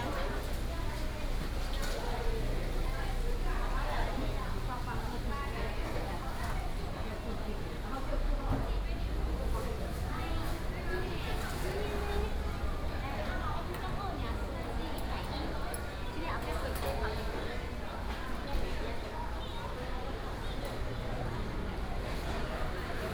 義成黃昏市場, Taiping Dist., Taichung City - dusk market
in the dusk market, Traffic sound, vendors peddling, Binaural recordings, Sony PCM D100+ Soundman OKM II